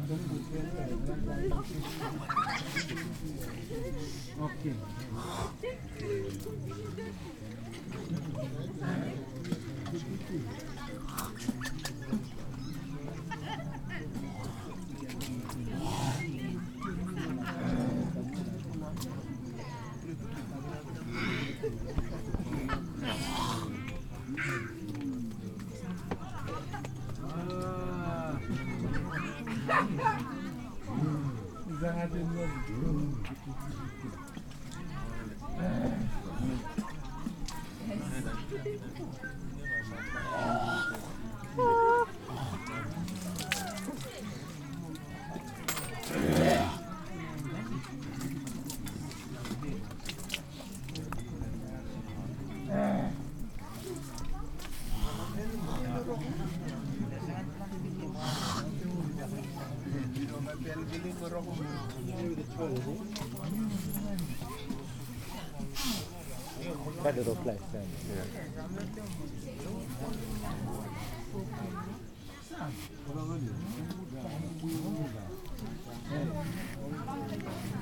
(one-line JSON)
{"title": "Malampa Province, Vanuatu - Kava bar in the evening", "date": "2011-08-08 20:30:00", "description": "Men sitting and drinking Kava, chatting and spitting as they do every evening", "latitude": "-16.32", "longitude": "168.01", "altitude": "23", "timezone": "Pacific/Efate"}